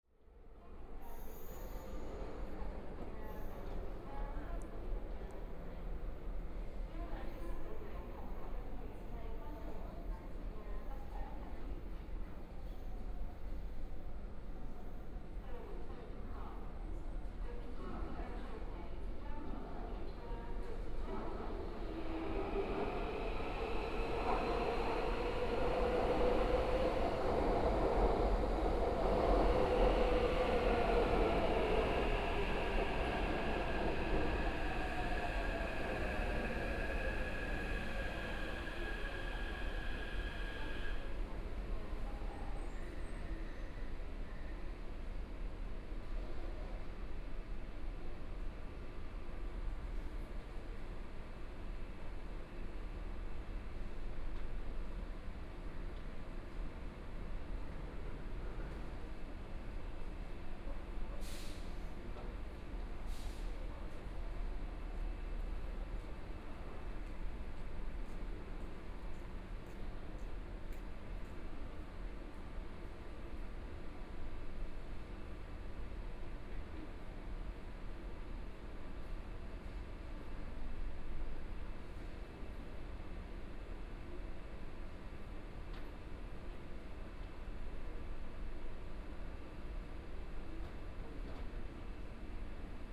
Guting Station, Taipei - On the platform
in the Station, On the platform, Binaural recordings, Zoom H4n+ Soundman OKM II
Daan District, Taipei City, Taiwan, 6 February 2014